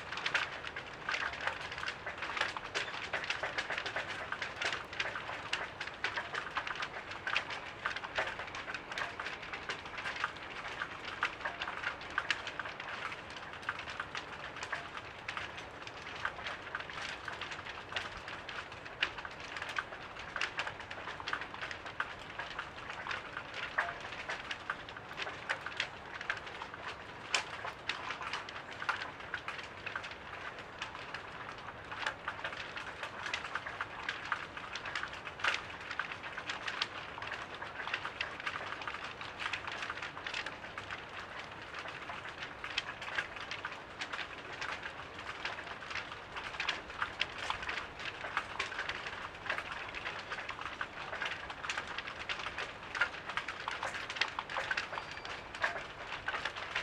Parque das Nações, Lisboa, Portugal - Flags on the wind - Flags on the wind
Flapping flags on the wind. Recorded with a AT BP4025 stereo XY mic into a SD mixpre6.